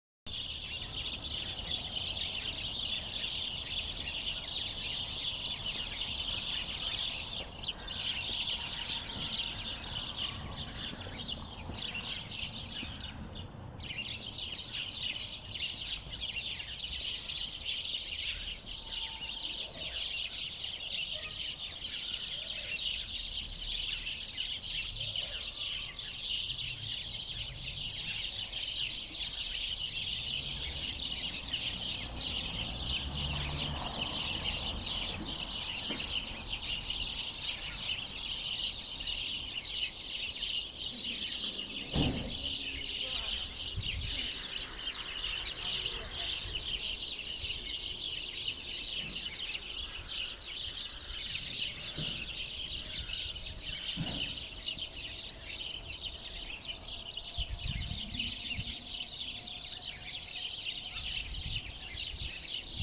Überraschend viele Spatzen versammeln sich in Büschen und pflegen den geräuschvollen Austausch